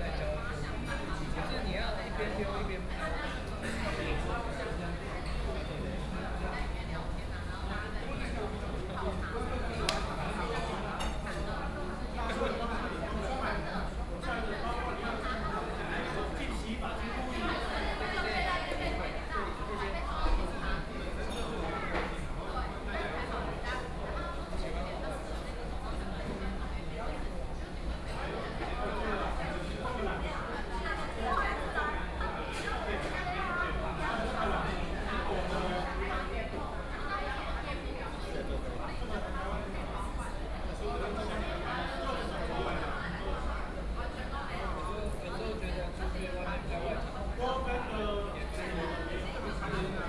Yonghe District, New Taipei City - In the restaurant
In the restaurant, Binaural recordings, Zoom H6+ Soundman OKM II
New Taipei City, Taiwan